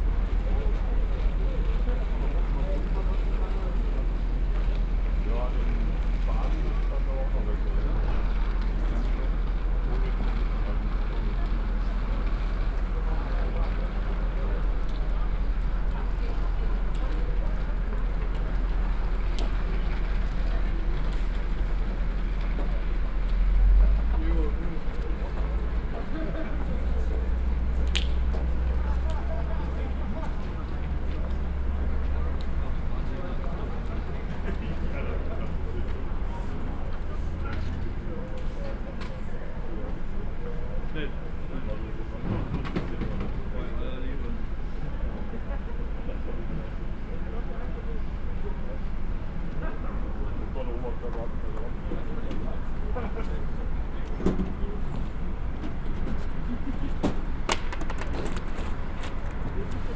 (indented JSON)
{
  "title": "cologne, deutz, station, track no 7",
  "date": "2011-01-16 17:08:00",
  "description": "people waiting and talking at the rail track, train passing by, a female anouncement, a train drives in and stops at the station\nsoundmap d - social ambiences and topographic field recordings",
  "latitude": "50.94",
  "longitude": "6.97",
  "altitude": "50",
  "timezone": "Europe/Berlin"
}